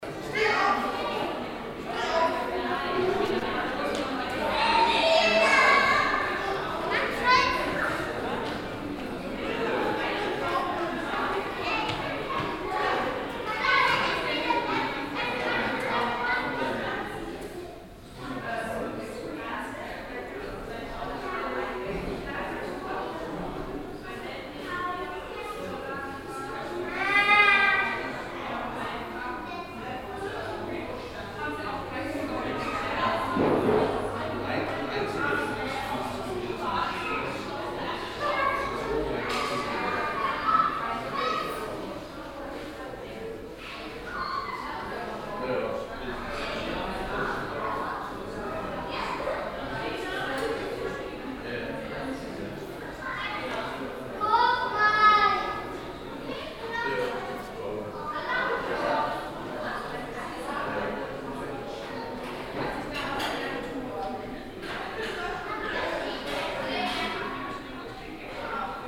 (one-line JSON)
{"title": "stuttgart, rathaus, public exhibition", "date": "2010-06-20 13:50:00", "description": "visitor ambience at an exhibition on the 4th floor of the building\nsoundmap d - social ambiences and topographic field recordings", "latitude": "48.77", "longitude": "9.18", "altitude": "250", "timezone": "Europe/Berlin"}